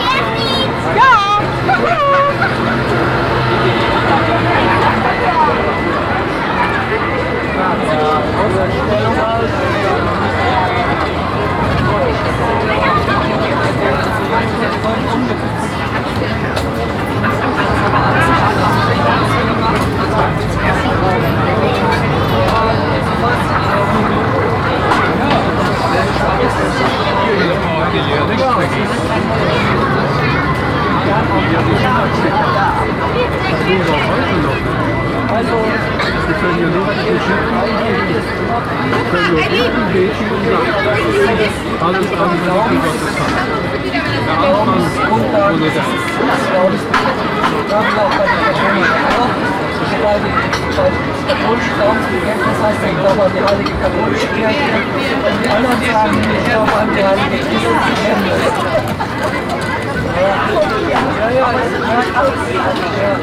Overath, Deutschland - overath, bahnhofplatz, spring feast
Recorded during the annual local spring feast on the small cental square of the town. Standing wind protected at a tent of Jesus people witnessing the conversation of a member of the Jesus people with a feast visitor. Parallel the sound of the overall action on the square and a small caroussel in the centre.
soundmap nrw - social ambiences and topographic field recordings